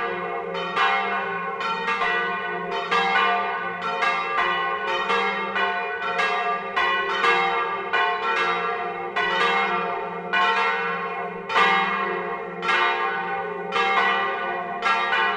La Hoguette (Calvados)
Église Saint-Barthélemy
Volée - Tutti
Normandie, France métropolitaine, France, 11 September 2020, 11:30